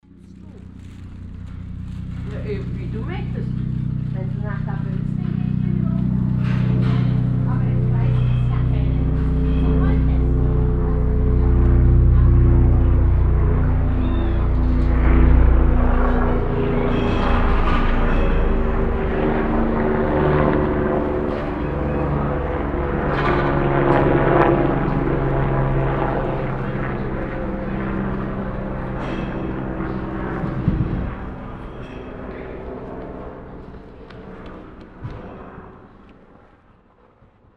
mettmann, düsseldorfer str, hubschrauberüberflug
frau mit hund, hubschrauberüberflug, sonnentag mittags
- soundmap nrw
project: social ambiences/ listen to the people - in & outdoor nearfield recordings